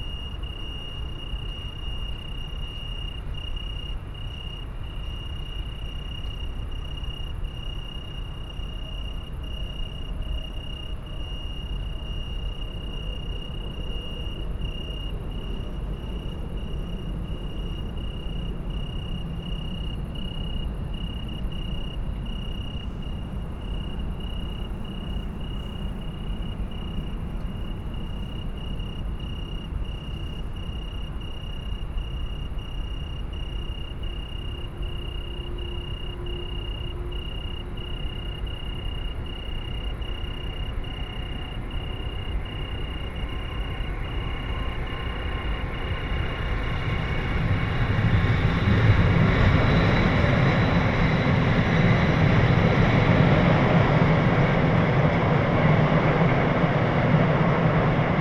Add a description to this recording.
different angle, almost binaural, trains passing, (Sony PCM D50, Primo EM172)